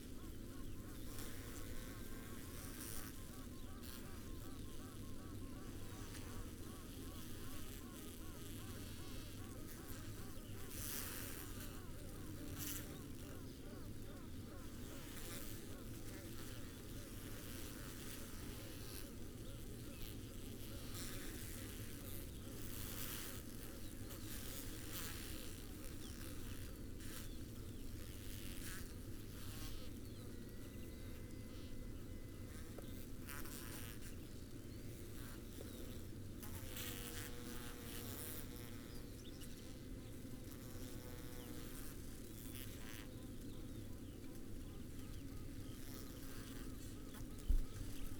Green Ln, Malton, UK - bee swarm ...
bee swarm ... xlr SASS on floor to Zoom F6 ... this according to a local bee keeper was a swarm ... the bees were smeared on the outside of the hive ... he said the queen would be in the middle of the mass ... they had swarmed as the hive might have been too small for the colony ..? the combs were full ..? the old queen had died ..? the new queen had killed her siblings ... would then having a mating flight before being led to a new site ... the first three minutes have the swarm buzzing in waves ... before general bee swarm buzzing ... some sounds are specific to the queens ... called quacking and tooting ... one sound is to quiet the swarm so the other queen can be located and stung to death ...